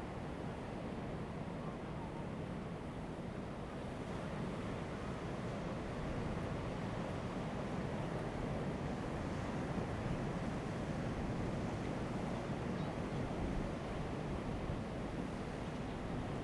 加路蘭, Taitung City - Waterfront Park
Waterfront Park, Sound of the waves, The weather is very hot
Zoom H2n MS +XY